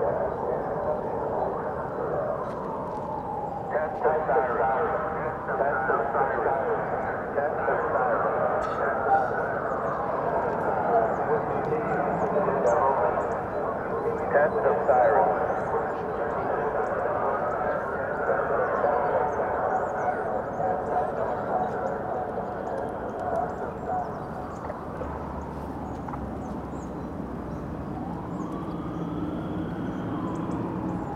Kimského Zahrada, Praha - Siren test in Prague
Each first Wednesday of the month, at 12h00, they do a siren test in the whole city of Prague.
Recorded here from a parc, on a hill, in Prague 5.
Recorded by a MS Setup CCM41 + CCM8 Schoeps
On a Sound Devices Mixpre6
GPS: 50.077172,14.404637
Recorded during a residency at Agosto Foundation